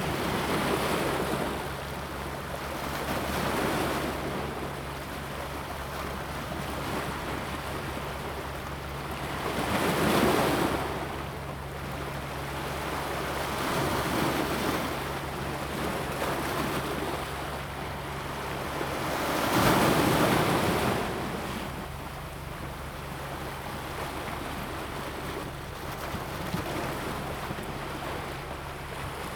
新屋綠色走廊, Xinwu Dist., Taoyuan City - Waves
Coastal block, at the seaside, Waves, High tide time, Wave block
Zoom H2n MS+XY
Taoyuan City, Xinwu District, 觀海路一段628號, 21 September 2017